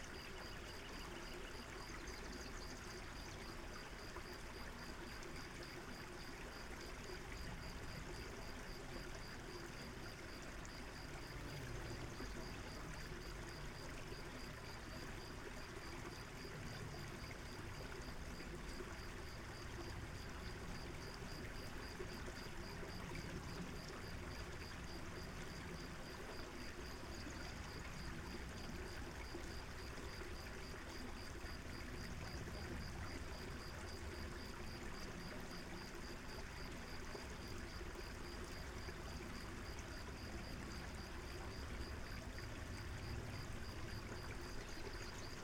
Golden State Hwy, Castaic, CA, USA - Late Afternoon Ambience
Late afternoon near a creek at a relatively remote small park in the Los Padres National Forest called Frenchman's Flat. Lots of crickets and birds and a few people enjoying the afternoon warmth.
California, United States of America, 28 April 2020